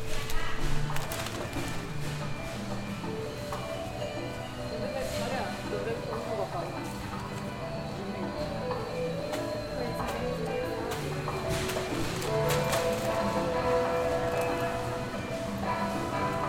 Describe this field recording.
Ambiente grabado en tiendas la vaquita de la castellana. Sonido tónico: música, voces, Señal sonora: paquetes, pasos, bolsas, viento, sonido de máquina lectora de precios. Equipo: Luis Miguel Cartagena Blandón, María Alejandra Flórez Espinosa, Maria Alejandra Giraldo Pareja, Santiago Madera Villegas, Mariantonia Mejía Restrepo.